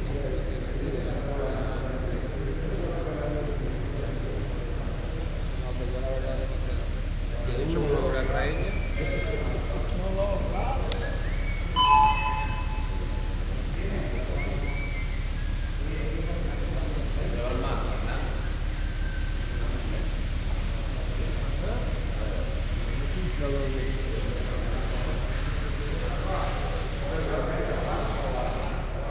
Llega el primer barco con sardinas y los subasteros discuten sobre el precio y la cantidad / First boat arrives with the sardines and people discussing about the price and quantity
Fuengirola, Spain, 18 July